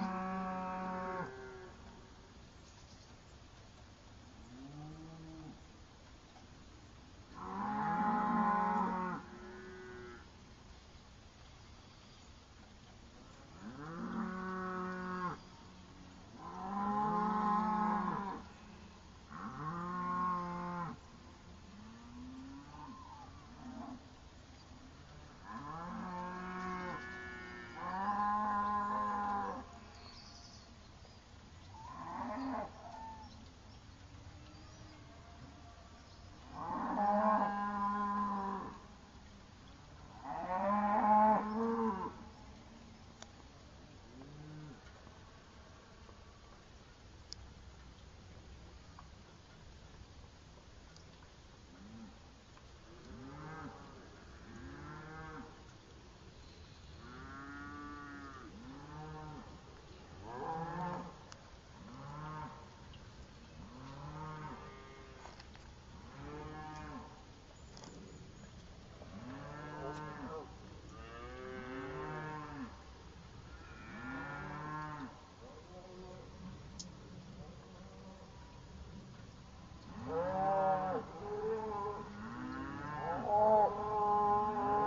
Märkische Schweiz, Dinosaurs roaring
Early that morning a prehistoric atavism woke me up. Dinosaurs, right here, out on the meadows.
Müncheberg, Germany